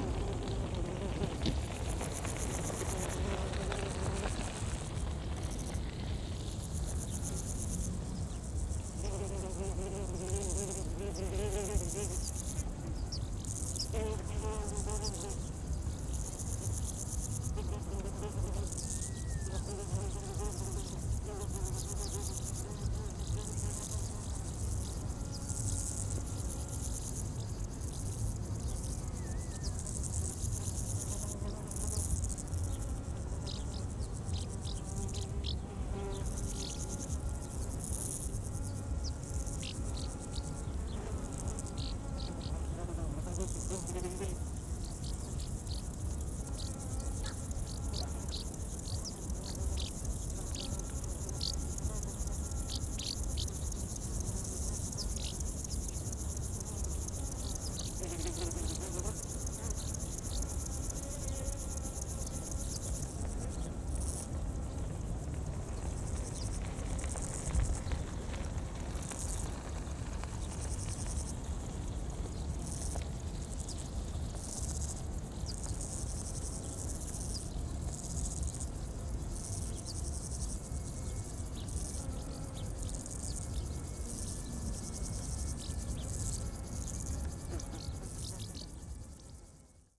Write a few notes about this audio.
Berlin, Tempelhofer Feld, fomer airport, high grass, many insects and overall busy park with music, cyclists and pedestrians. The microphone lies on the ground, prefering the insects sound over ambience, (SD702, Audio Technica BP4025)